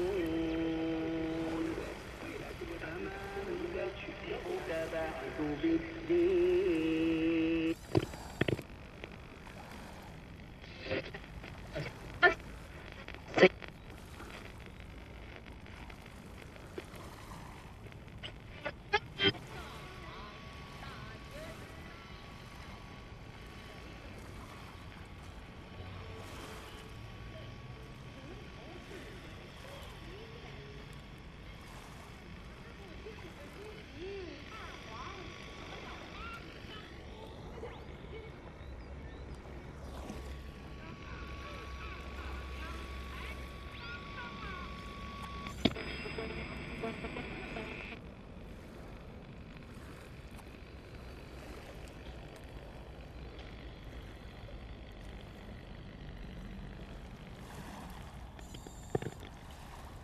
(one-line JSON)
{"title": "Smíchovská pláž", "date": "2011-04-07 12:51:00", "description": "Radios on the Smíchov beach near Železniční most are being re-tuned in realtime according to sounds of Vltava - Moldau. Underwater sonic landscapes and waves of local boats turn potentiometers of radios. Small radio speakers bring to the river valley voices from very far away…", "latitude": "50.07", "longitude": "14.41", "altitude": "187", "timezone": "Europe/Prague"}